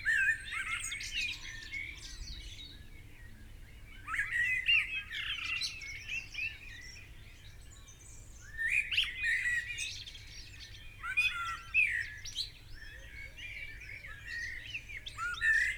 Unnamed Road, Malton, UK - blackbird song ... left ... right ... and centre ...
blackbird song ... left ... right ... and centre ... lavalier mics clipped to a bag ... placed in the crook of a tree ... bird call ... pheasant ...